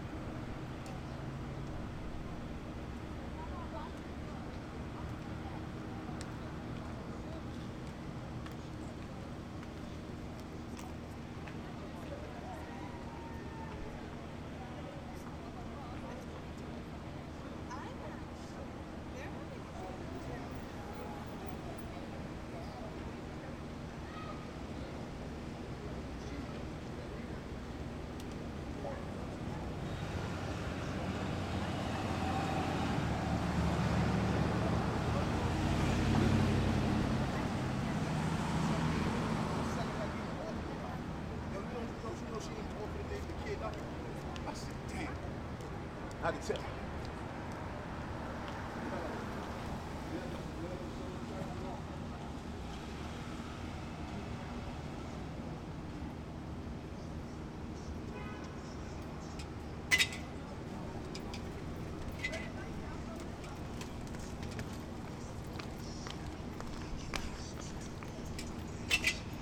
Outside Bodega, Corner of Rockaway Avenue & Sumpter Street, Ocean Hill, Brooklyn, NY, USA - B.E.E.S. - MaD Community Exploration Soundwalk 1
Anthrophonic soundscape outside corner bodega, near the oldest school public school building in Brooklyn, NY, which houses the new Brooklyn Environmental Exploration School. Captured during a Making a Difference workshop, facilitated by Community Works, which models tools for connecting students to communities. 6 minutes, 12 sec. Metallic sound at 115 sec. in is the door of the bodega.